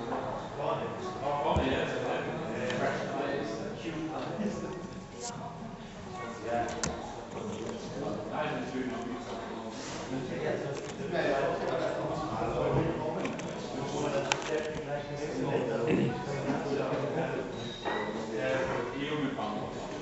Weingarten, Deutschland - Waiting at the foyer
Waiting for an event to start, drinking a beer and watching the scene
glas, noise, speaking, people, waiting, background, talking
Weingarten, Germany